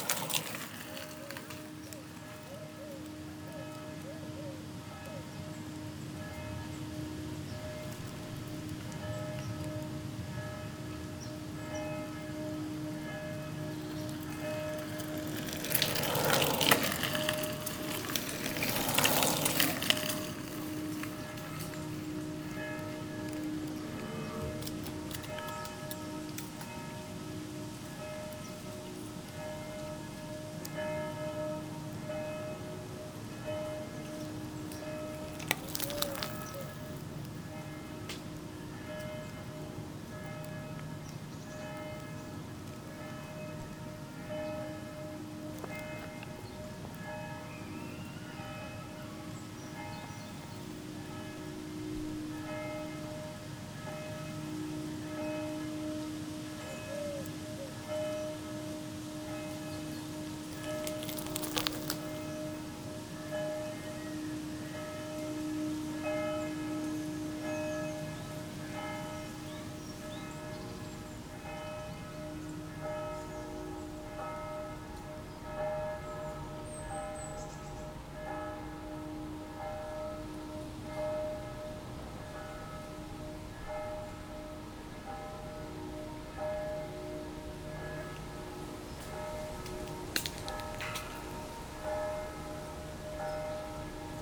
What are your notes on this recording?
Distant bells from the Heverlee church, two trains, a lot of acorns falling and bicycles circulating on it.